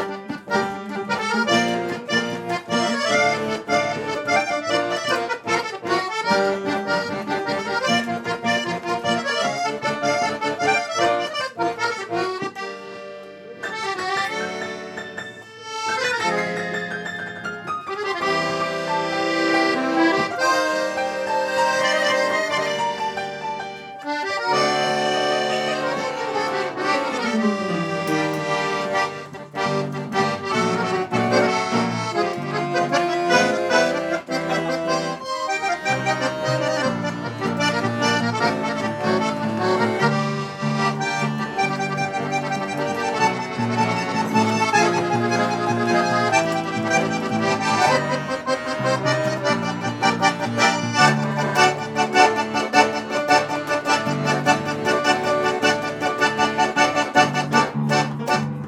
Tallinn, Koidu
musicians improvising after film screening
Tallinn, Estonia, 21 April 2011, ~22:00